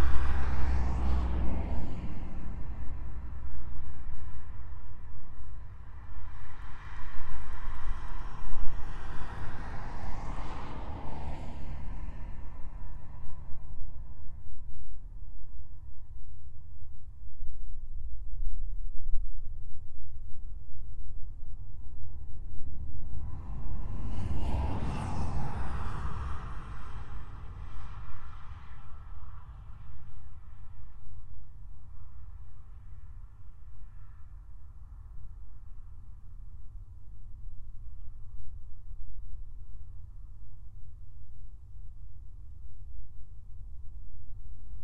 Sirutėnai, Lithuania, in a concrete tube
old concrete electricity pole lying in a meadow. small mics in it.
12 April 2019, 17:30